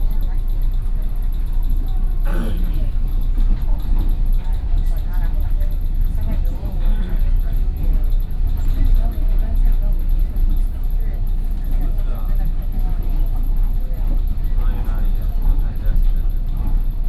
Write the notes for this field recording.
from Fuzhou Station to Shulin Station, In railcar, Binaural recordings, Sony PCM D50 + Soundman OKM II